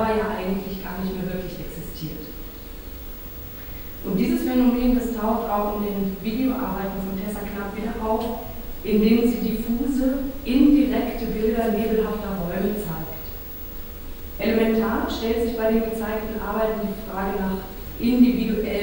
rede dr. ann kathrin günzel - kuratorin zur ausstellungseröffnung der medienkünstlerin tessa knapp im temporären kunstraum im renovierten dachstuhl des hauses
soundmap nrw: social ambiences/ listen to the people - in & outdoor nearfield recordings
goltsteinstrasse, 16 September, 10:00